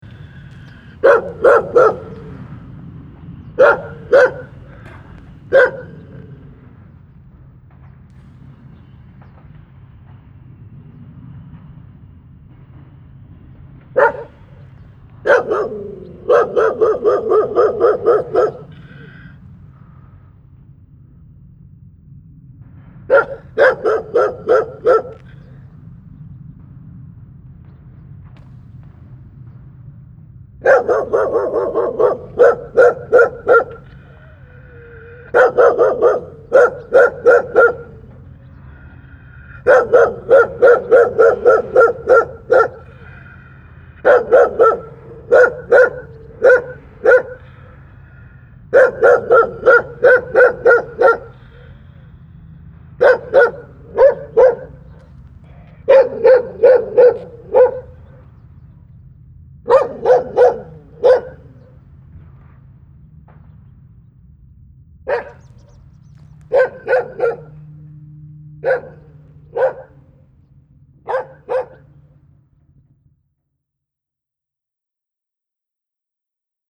Landscheid, Tandel, Luxemburg - Landscheid. Am Klousterfeld, old yard dog
Beim Gang durch die Ortschaft an einem milden, leicht windigem Sommertag. Der Klang des Bellens eines alten Hofhundes.
Walking through the village on a mild windy summer day. The sound of an old yard dog barking.
7 August 2012, ~15:00